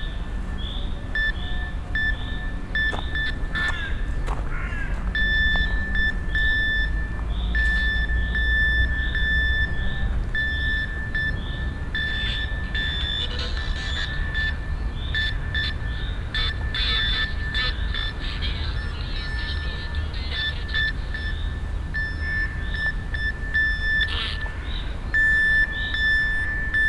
Cetatuia Park, Klausenburg, Rumänien - Cluj, Fortress Hill project, radio transmission, morse code
At the monument of Cetatuia. A recording of a soundwalk with three radio receivers of the project radio transmission on frequency FM 105. The sound of a morse code with the in five languages coded and repeated message: "the war is over"
Soundmap Fortress Hill//: Cetatuia - topographic field recordings, sound art installations and social ambiences
29 May 2014, 16:10